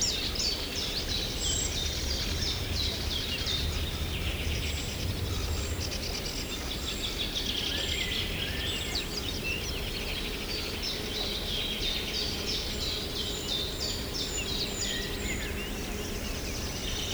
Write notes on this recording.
Early in the morning with lots of mosquitos around.